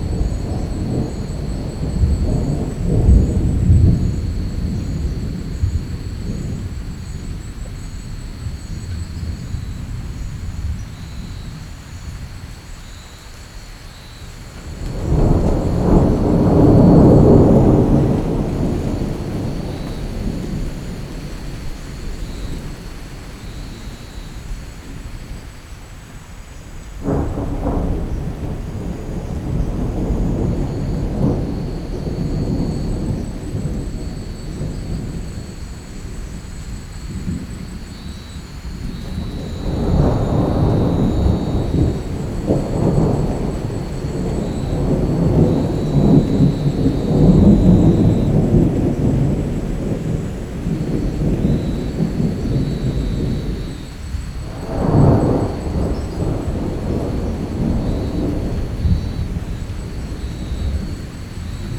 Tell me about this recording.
One Hour Thunder & rain during the night in the middle of the bananas trees, in Veracruz. Recorded by a setup ORTF with 2 Schoeps CCM4, On a Sound Devices Mixpre6 recorder, During a residency at Casa Proal (San Rafael, Veracruz)